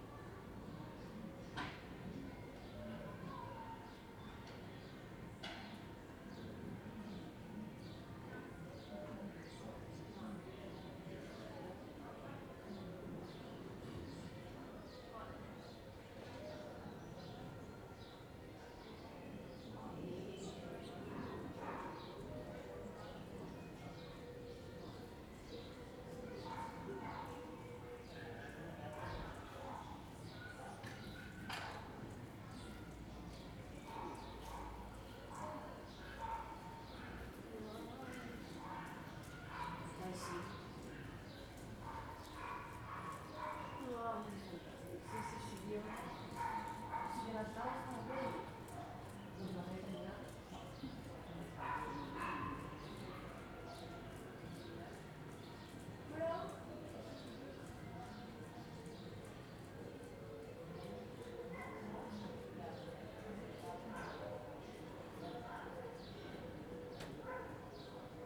Carrer de Joan Blanques, Barcelona, España - 2020 April 1 BCN Lockdown
Noises from the neighbourhood. People talking, someone playing the piano, music… Recorded from a window using a Zoom H2. No edition.
Catalunya, España, 12 April 2020, 14:00